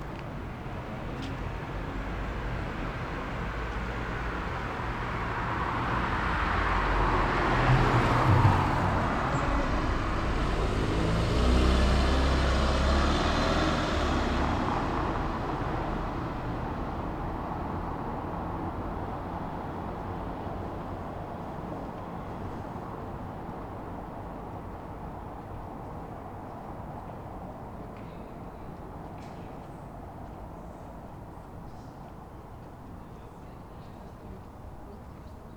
Berlin: Vermessungspunkt Friedelstraße / Maybachufer - Klangvermessung Kreuzkölln ::: 20.08.2010 ::: 01:25